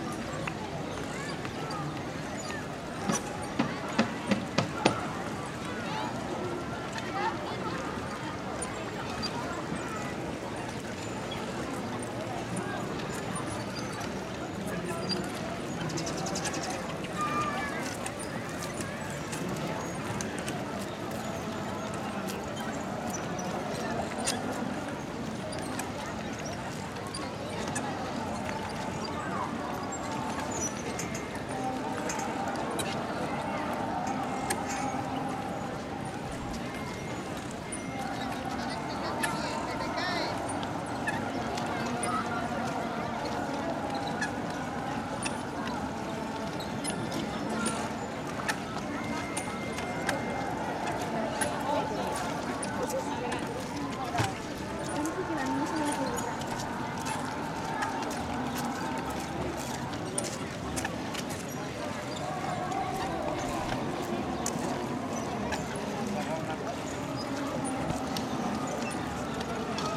Barcelona, lake parc de la ciutadella
Barcelona, parc de la ciutadella, lake